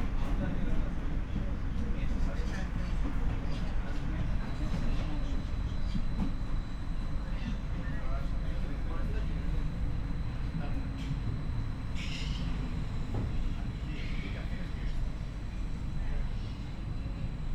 metro train soundscape on line 1
(Sony PCM D50, Primo EM172)
5 April 2016, ~22:00